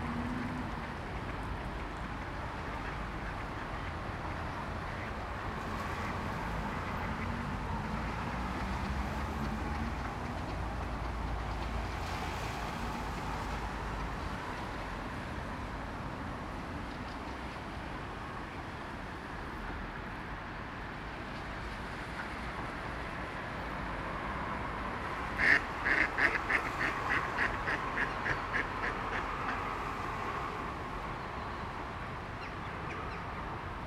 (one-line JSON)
{"title": "Svandammen, Uppsala, Sweden - ducks and traffic at Svandammen pond", "date": "2019-10-20 13:11:00", "description": "ducks quacking, cars and busses humming by, people voices and footsteps.\nrecorded with H2n, 2CH, handheld, windscreen", "latitude": "59.85", "longitude": "17.64", "altitude": "13", "timezone": "Europe/Stockholm"}